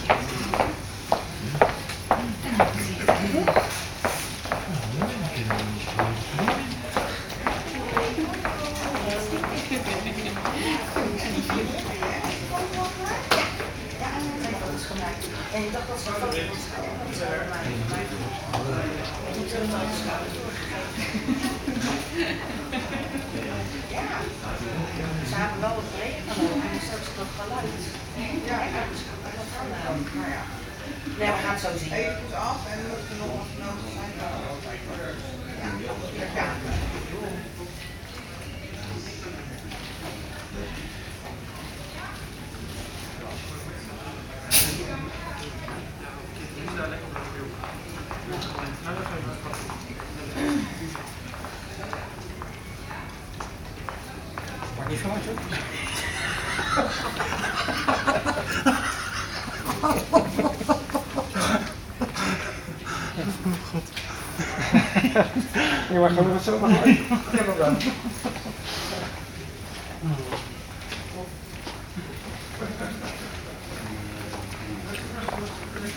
Kleiweg, Rotterdam, Netherlands - Sint Franciscus
Recorded inside Sint Franciscus Hospital using Soundman binaural microphones
Zuid-Holland, Nederland, 9 August 2022, 15:00